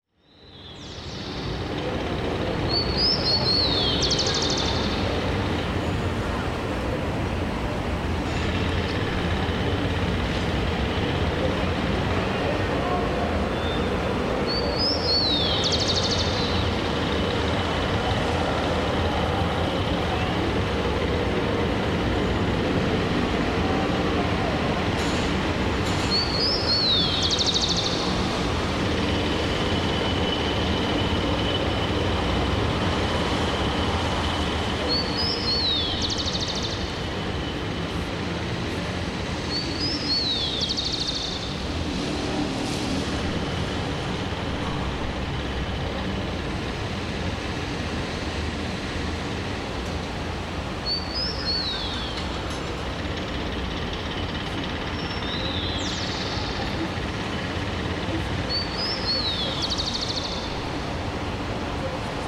Cra., Suba, Bogotá, Colombia - Soundscape Conjunto Mirador de Suba (balcony)
In the present soudscape that belongs to the balcony of a building, we found -Tonic or Fundamental Sounds-: trafic in morning hours (10:00 a.m.) formed by cars, motorcycles and buses that cross the puddles of the streets; we heard this atmosphere so dark and melancholic that happens when it stops raining it mixes with the repetitive construction sound, and this urban sounds set and the cold wind contrast with the sound signals: the vigorous energy of birdsong and the hits against the ground of metal tools.
Because we are from a perspective a little away of the street, we can find certain sound marks: we perceive voices almost whispered and people behind the balcony, also it's possible to filter someone sweeping.
All this indentifies that we are recording a city soundscape, but from a residential. I consider that in itself, all of the city scape with the construction and the whistles of birds are too a sound mark of the place.
This was recorded with a cellphone.